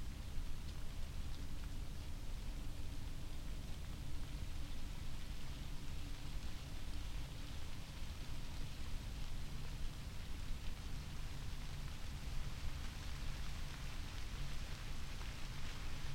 Unnamed Road, Malton, UK - tawny owl calls ...
tawny owl calls ... SASS on tripod ... bird calls ... little owl ... back ground noise ... rustling leaves ... taken from extended recording ...